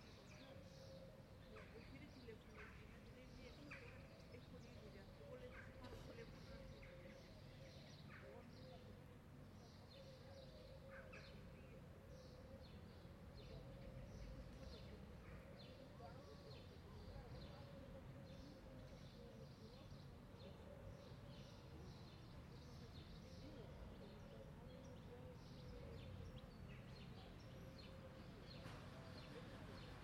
Ικονίου, Λυκούργου Θρακός και, Ξάνθη, Ελλάδα - Park Megas Alexandros/ Πάρκο Μέγας Αλέξανδρος- 09:30
Quiet ambience, birds singing, person passing by, light traffic.
Περιφέρεια Ανατολικής Μακεδονίας και Θράκης, Αποκεντρωμένη Διοίκηση Μακεδονίας - Θράκης, 12 May 2020